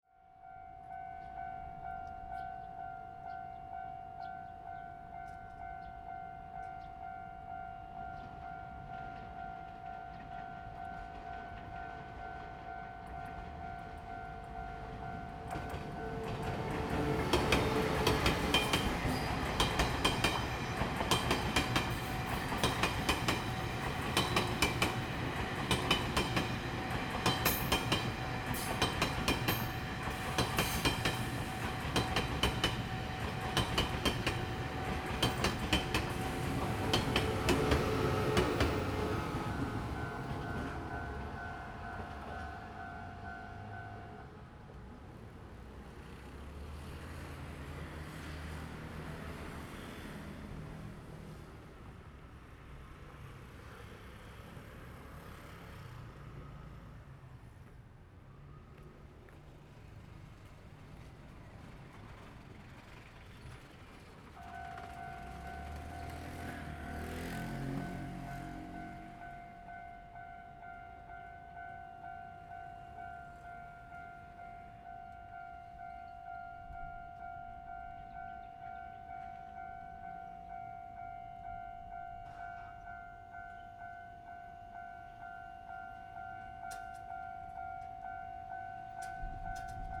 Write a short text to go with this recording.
Traffic sound, The train runs through, Next to the tracks, Zoom H2n MS+XY +Spatial audio